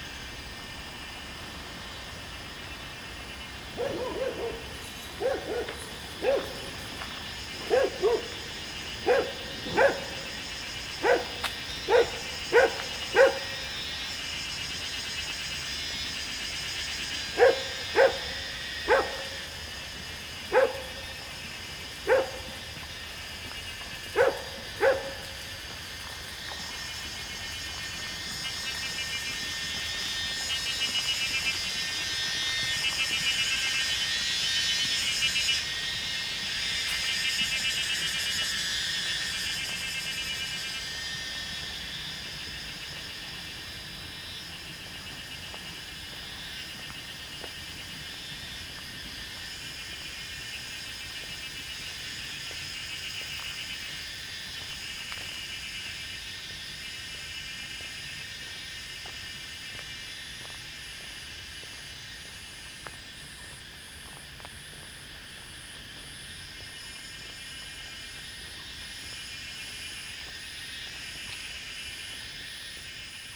桃米溪, 桃米里 Puli Township - Walking along beside the stream
Walking along beside the stream, Cicadas sound, Dogs barking, Brook
Zoom H2n MS+XY